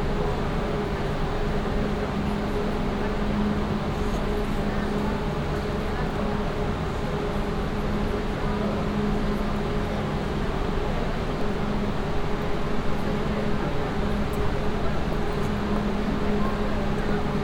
in a subway station, waiting for train, the constant moor sound of a waiting train, train arrives
international cityscapes - social ambiences and topographic field recordings
13 October 2009